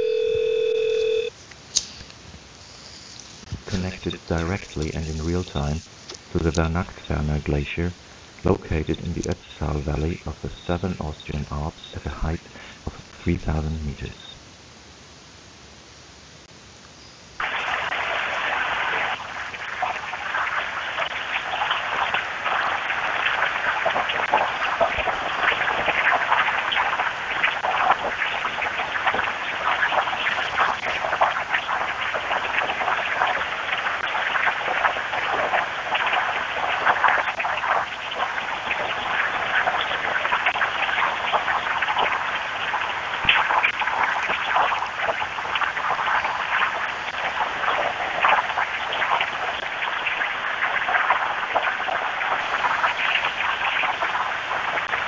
Calling the Glacier: Vernagtferner - call by uno to the glacier
calt to the glacier with my laptop. not sure if this is really live?